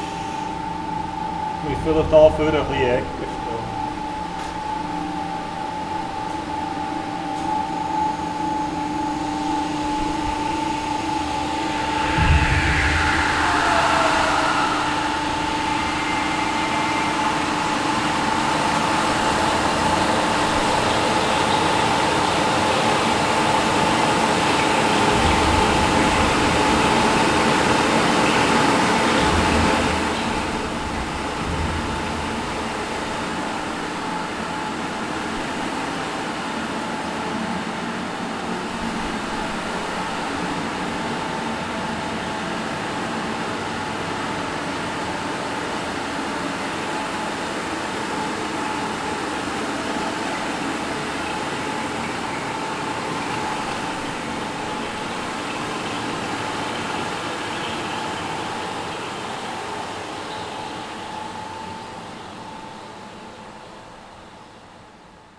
Im Untergrund des Marzilibades Bern
mit dem Techniker im Untergrund des Marzilibades, Reinigung und Umverteilung des Wassers für die Schwimmzonen
Bern, Schweiz, 10 June